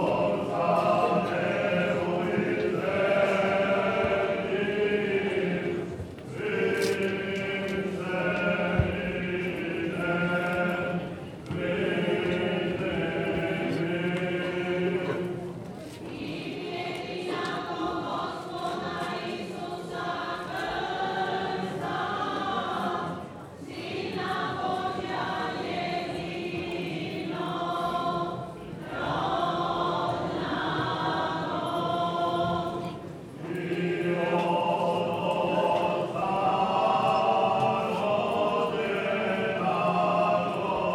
a very old Slavic song sung by the local choir, recorded from the entrance to the church
Croatia, 14 August 1997